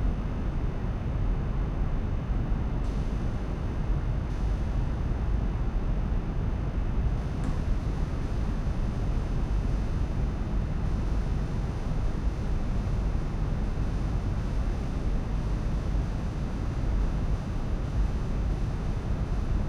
Oberbilk, Düsseldorf, Deutschland - Düsseldorf, tanzhaus nrw, main stage
At the empty main stage hall of the tanzhaus nrw. The sound of the ventilation and the electric lights.
This recording is part of the exhibition project - sonic states
soundmap nrw - sonic states, social ambiences, art places and topographic field recordings
soundmap nrw - social ambiences, sonic states and topographic field recordings